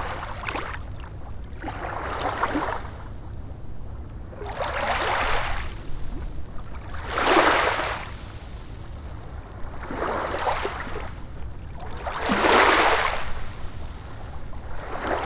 thiessow: strand - the city, the country & me: beach
sloshing waves over pebbles
the city, the country & me: march 6, 2013